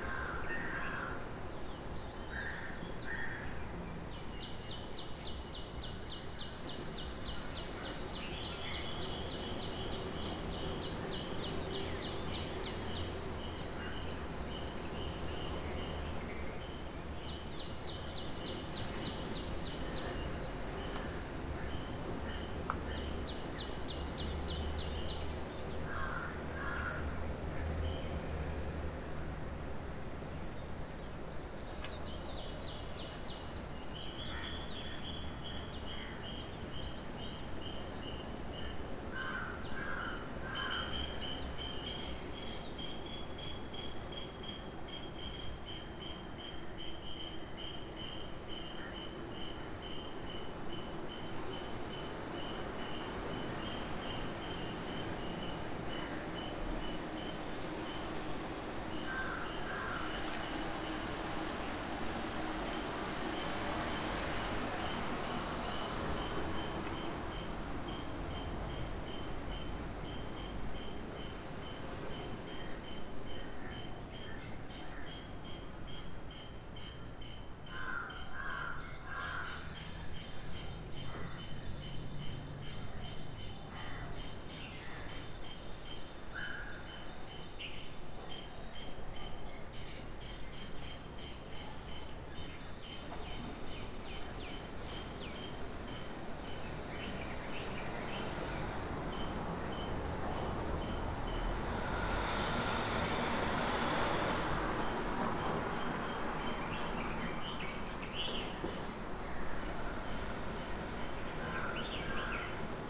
{
  "title": "Bambalapitiya, Colombo, Sri Lanka - Balcony morning sounds Sinhala-Tamil NY in Sri Lanka",
  "date": "2012-04-13 09:30:00",
  "description": "Soundscape from my balcony in Colombo on the morning of the Sinhala-Tamil New Year. The usual suspects are there, a srilankan broom brushing the leaves away, the crows, the chipmunks and various other birds that I can't name including a very close visitor towards the end of the track. Its much quieter than usual because its a holiday and its the only day of the year that I haven't seen any buses (the noisiest most dangerous things around) on the road. You can even hear the waves of the sea if you listen carefully.",
  "latitude": "6.89",
  "longitude": "79.86",
  "timezone": "Asia/Colombo"
}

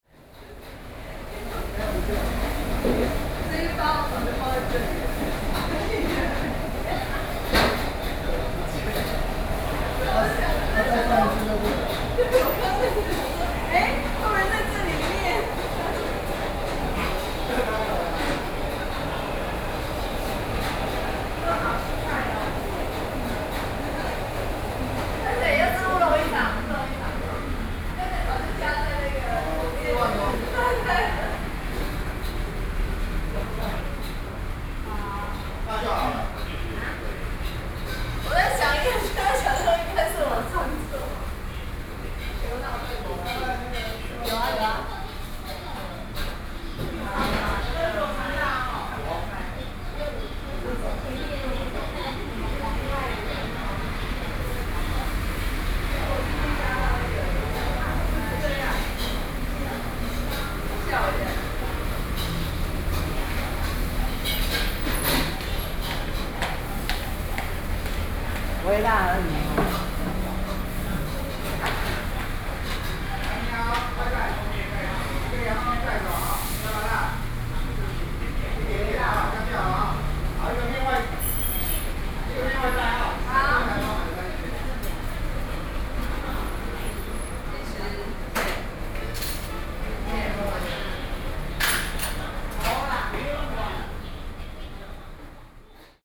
{
  "title": "Sec., Zhonghua Rd., Xinzhuang Dist.New Taipei City - Restaurant",
  "date": "2012-11-15 18:06:00",
  "description": "Restaurant, Binaural recordings, ( Sound and Taiwan - Taiwan SoundMap project / SoundMap20121115-30 )",
  "latitude": "25.04",
  "longitude": "121.45",
  "altitude": "4",
  "timezone": "Asia/Taipei"
}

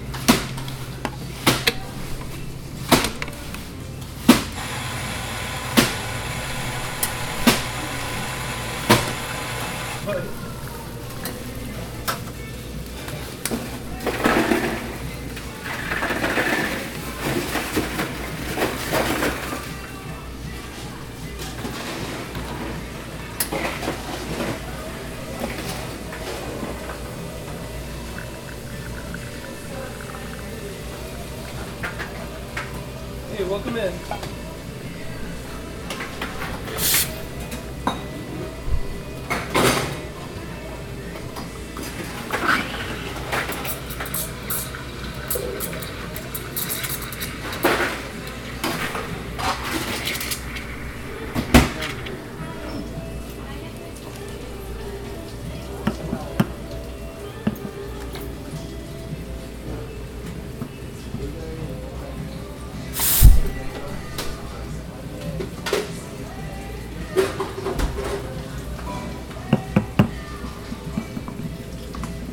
{
  "title": "Embarcadero del Norte, Goleta, CA, USA - Making a Flat White",
  "date": "2019-10-22 19:17:00",
  "description": "This recording is from a college town coffee shop, Caje in Isla Vista, on a warm and sunny fall day. It is primarily the sounds of the espresso machine through the stages of grinding the beans, pulling the shots, steaming the milk and pouring the cup. The sounds of background music, the employees working, other orders being prepared, and customers chatting can also be heard. Recorded with a Zoom H4N mic",
  "latitude": "34.41",
  "longitude": "-119.86",
  "altitude": "17",
  "timezone": "America/Los_Angeles"
}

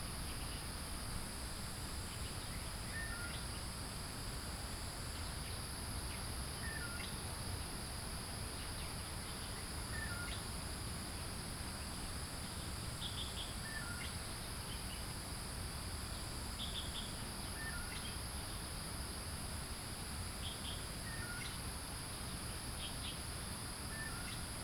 {
  "title": "桃米巷, 桃米里, Taiwan - Birdsong",
  "date": "2015-08-13 07:18:00",
  "description": "Birds call, The sound of water streams",
  "latitude": "23.94",
  "longitude": "120.94",
  "altitude": "463",
  "timezone": "Asia/Taipei"
}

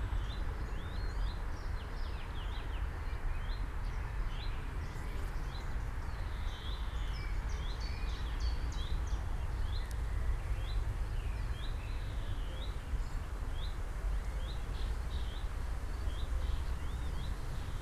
{
  "title": "Eiche, Ahrensfelde, Germany - Grenzteich, pond ambience",
  "date": "2015-05-23 17:05:00",
  "description": "ambience heard within a swampy area, call of a cuckoo (Kuckuck)\n(SD702, DPA4060)",
  "latitude": "52.57",
  "longitude": "13.58",
  "altitude": "51",
  "timezone": "Europe/Berlin"
}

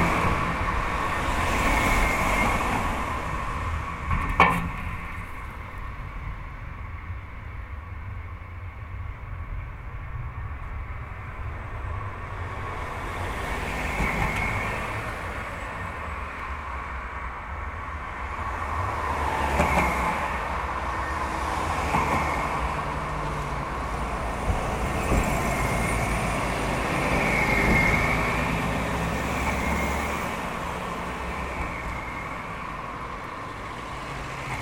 {
  "title": "Petro Vileišio tiltas, Kaunas, Lithuania - Traffic going over the bridge",
  "date": "2019-08-16 15:00:00",
  "description": "Combined stereo field and dual contact microphone (placed on metal railings) recording of bridge traffic. Recorded with ZOOM H5.",
  "latitude": "54.90",
  "longitude": "23.89",
  "altitude": "21",
  "timezone": "Europe/Vilnius"
}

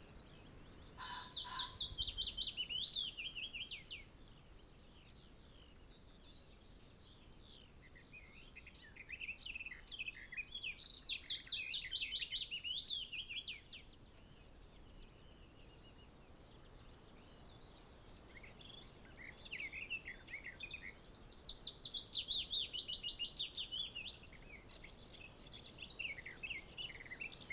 {
  "title": "Luttons, UK - willow warbler ... garden warbler ... soundscape ...",
  "date": "2011-05-18 05:30:00",
  "description": "Willow warbler ... garden warbler ... soundscape ... bird song and calls ... yellowhammer ... skylark ... pheasant ... corn bunting ... binaural dummy head ... sunny ... very breezy early morning ...",
  "latitude": "54.13",
  "longitude": "-0.55",
  "altitude": "154",
  "timezone": "GMT+1"
}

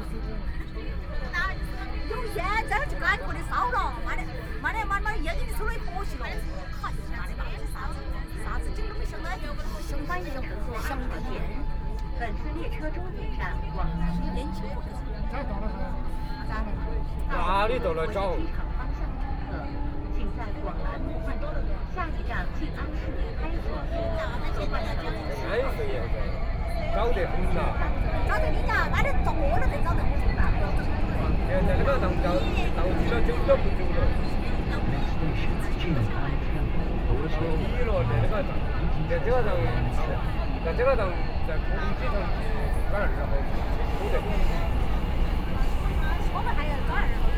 {
  "title": "Jing'an District, Shanghai - Line 2 (Shanghai)",
  "date": "2013-11-23 16:00:00",
  "description": "In the subway, Crowd, Voice message broadcasting station, Binaural recording, Zoom H6+ Soundman OKM II",
  "latitude": "31.22",
  "longitude": "121.44",
  "altitude": "5",
  "timezone": "Asia/Shanghai"
}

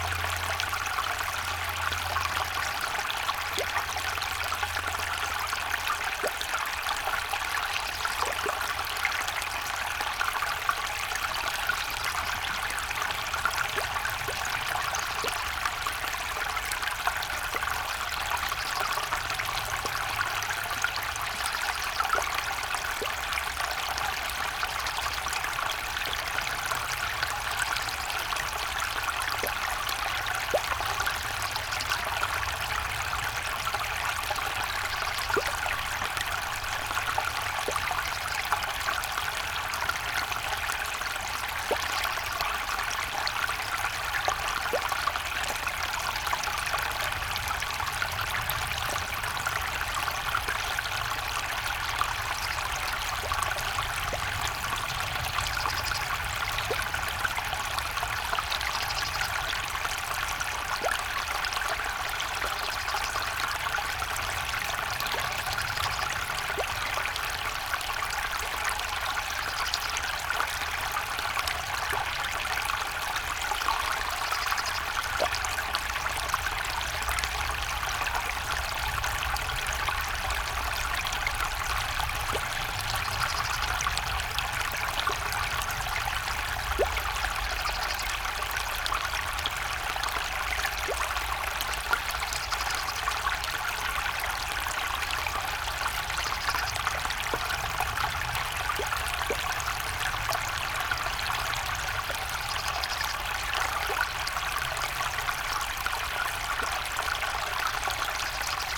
{
  "title": "Berlin Buch - Zick-Zack-Graben, ditch drins into water pipe",
  "date": "2022-01-23 12:35:00",
  "description": "Water from ditch drains into canal pipe, which ensures eco-connectivity between habitats. Distant drone of the nearby Autobahn\nThe Moorlinse pond is drained by the zigzag ditch (Zick-Zack-Graben) after snowmelt or heavy rainfall to such an extent that the road next to it is no longer flooded. In 2009, a sill was completed at the beginning of the ditch so that, on the other hand, complete drainage of the Moorlinse can no longer occur. The ditch itself, as part of the former Rieselfelder drainage system, first runs off the Moorlinse to the southwest and, after its namesake zigzag course, flows into the \"Graben 1 Buch\", which in turn flows into the Lietzengraben.\n(Sony PCM D50)",
  "latitude": "52.63",
  "longitude": "13.47",
  "altitude": "52",
  "timezone": "Europe/Berlin"
}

